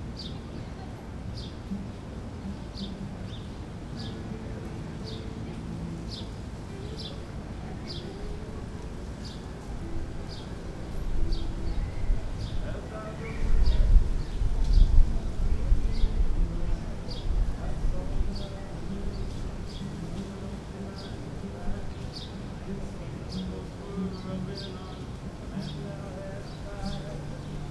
acoustic, guitar, talking, birds, birdsong
IA, USA, 18 July 2010